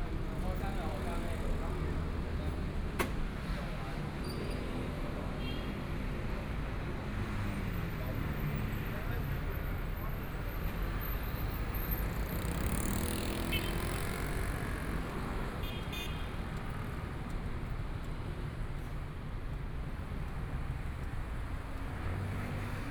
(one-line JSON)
{"title": "Changchun Rd., Zhongshan Dist. - on the Road", "date": "2014-01-20 16:26:00", "description": "Walking on the road, （Changchun Rd.）Traffic Sound, Binaural recordings, Zoom H4n+ Soundman OKM II", "latitude": "25.06", "longitude": "121.53", "timezone": "Asia/Taipei"}